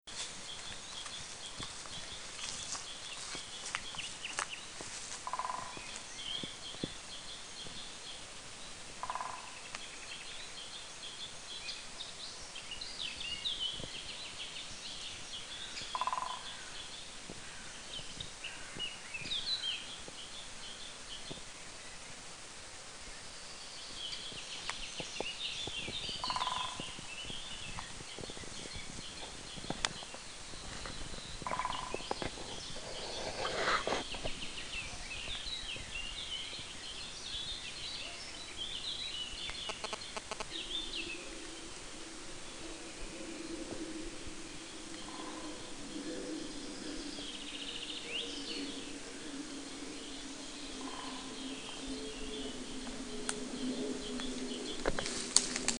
boschi della brughierezza, Parabiago, Picchi ai boschi della brughierezza
picchi ai boschi brughierezza (giugno 2003
Busto Garolfo Milan, Italy, 15 June 2003